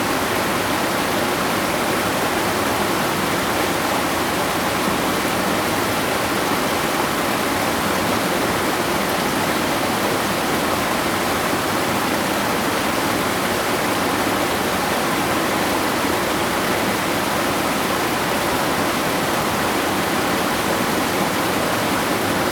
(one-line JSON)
{"title": "猴洞坑瀑布, 礁溪鄉白雲村, Taiwan - Streams and waterfalls", "date": "2016-12-07 13:24:00", "description": "Streams and waterfalls\nZoom H2n MS+XY", "latitude": "24.84", "longitude": "121.78", "altitude": "105", "timezone": "GMT+1"}